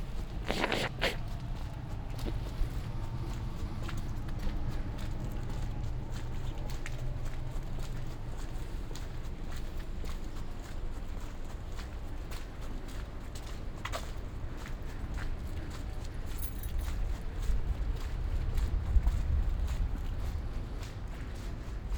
“Outdoor market on Saturday afternoon with light rain at the time of covid19”: Soundwalk
Chapter CLX of Ascolto il tuo cuore, città. I listen to your heart, city.
Saturday, March 6th, 2021. Walking in the outdoor market at Piazza Madama Cristina, district of San Salvario, four months of new restrictive disposition due to the epidemic of COVID19.
Start at 3:47 p.m. end at 4:05 p.m. duration of recording 17'39”
The entire path is associated with a synchronized GPS track recorded in the (kml, gpx, kmz) files downloadable here: